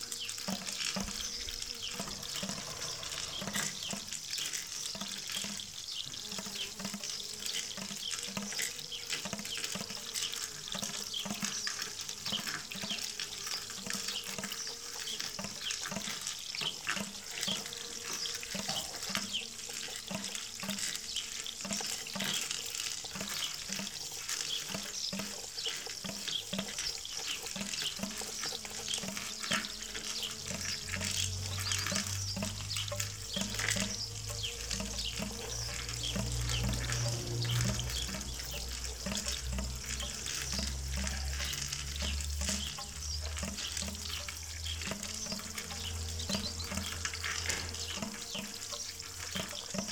Brodce, Kněžice, Česko - Na zahradě
Kraj Vysočina, Jihovýchod, Česká republika